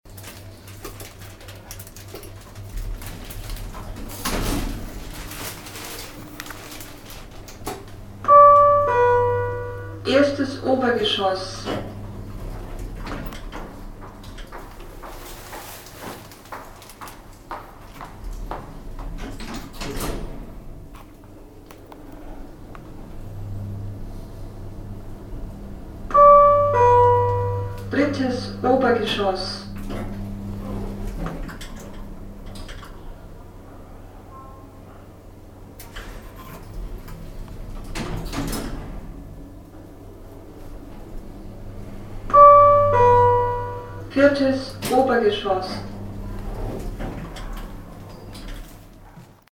stuttgart, rathaus, elevator
inside the modern elevator with level announcement voice
soundmap d - social ambiences and topographic field recordings